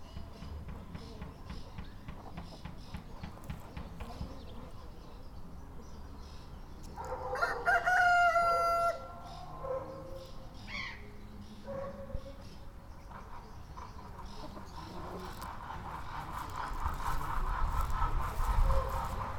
Osek u Teplic, Česká republika - nadražní zuková krajina
domy u dolního nádraží s drůbeží
Osek, Czech Republic